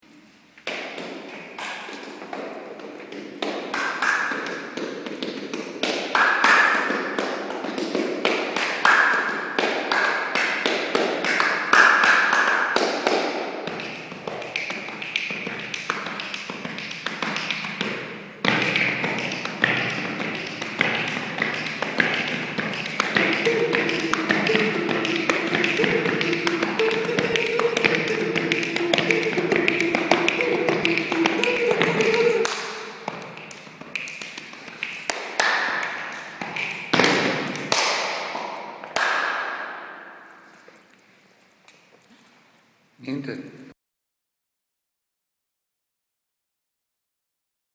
sequenza di bodypercussion javier romero presso il cinquecentesco odeo cornaro